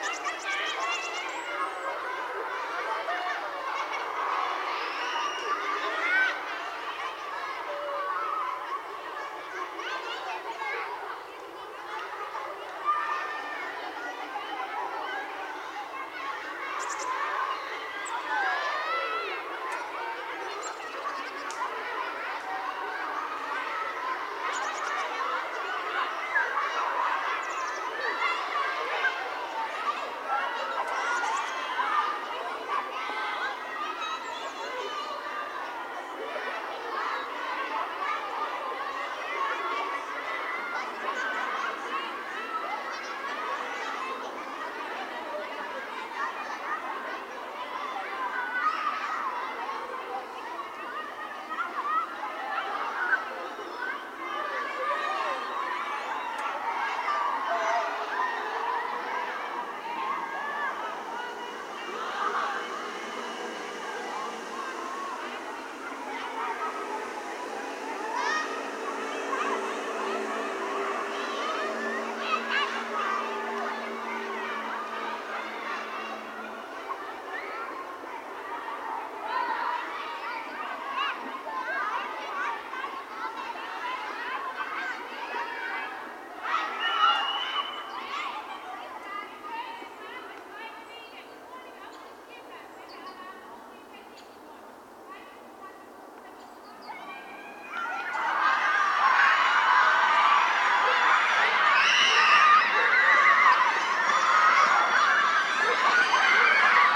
Schulhof mit Kindern
Bundesweiter Probealarm 11:00 Sierene auf Schuldach und andere im Hintergrund
10 September 2020, 11:00